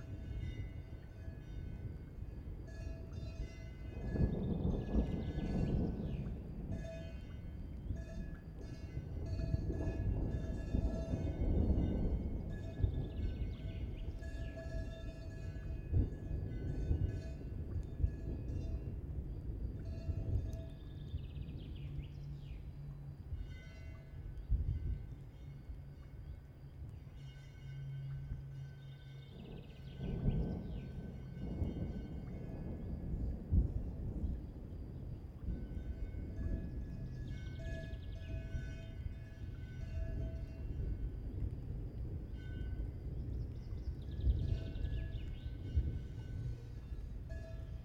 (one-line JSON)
{"title": "Buchenberg, Deutschland - Donnergrollen", "date": "2005-06-29 19:17:00", "description": "Donnergrollen, kurzer Hagelschauer, Gebimmel von Kuhglocken, das Gewitter zieht ab. Kein Regen.", "latitude": "47.73", "longitude": "10.15", "altitude": "957", "timezone": "Europe/Berlin"}